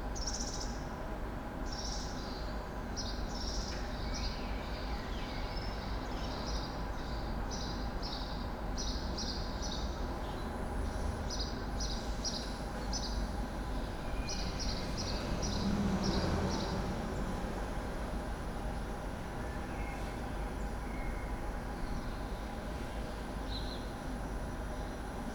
from/behind window, Mladinska, Maribor, Slovenia - morning, wind instrument somewhere
24 April 2014